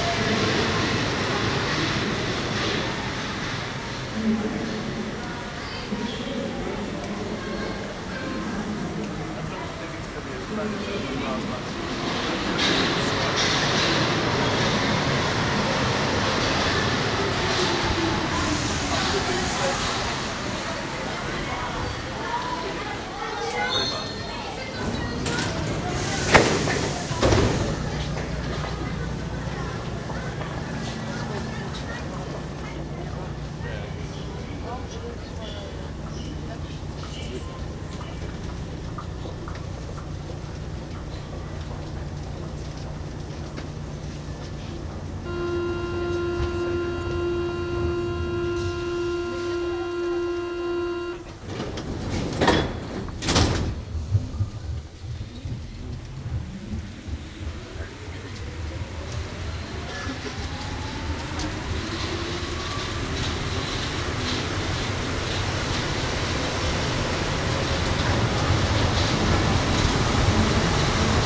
Metro trip from Republique to Rambuteau, Paris
Metro trip from Republique to Rambuteau. Some wind. Binaural recording.
Paris, France, September 2010